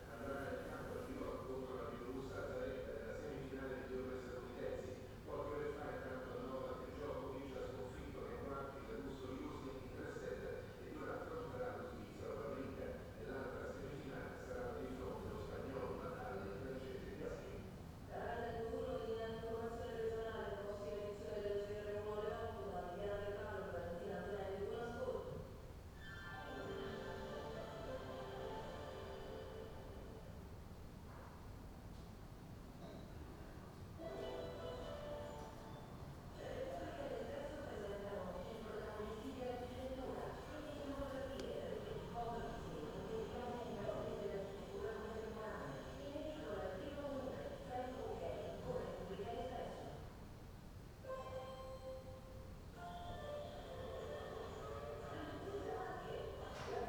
inner yard window, Piazza Cornelia Romana, Trieste, Italy - morning news